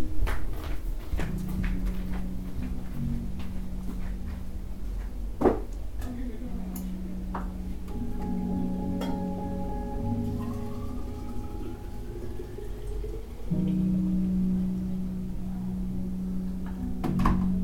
Klášterní, Česká Lípa, Česko - Tearoom
Ambient sound of Tearoom
Severovýchod, Česká republika, 30 June 2020